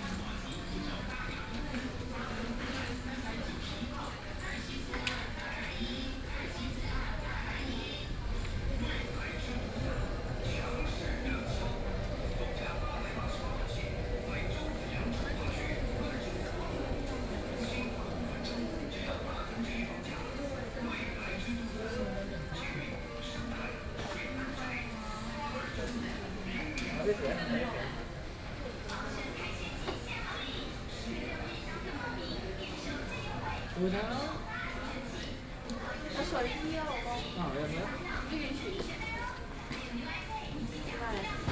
Datong District, Taipei City - Underground streets